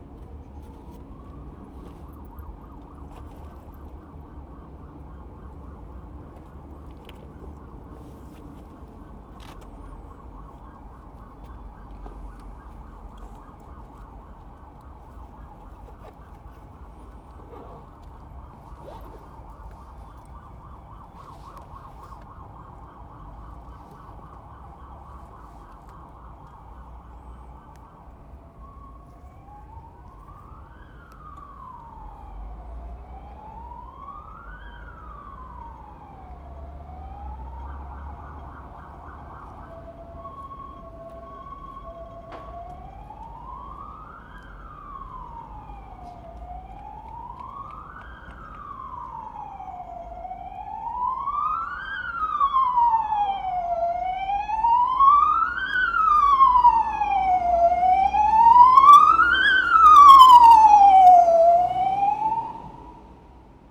Sint-Jans-Molenbeek, Belgium - Verheydenstr walk1 close siren, trains
Start of a walk along exploring the Verheyden street to Jacque Brel station soundscape. In this place I'm leaning against a wall with the sounds of the Weststation mid distance. A close siren (ambulance) passes by and 2 train. A tram faintly tings.
Anderlecht, Belgium, 15 October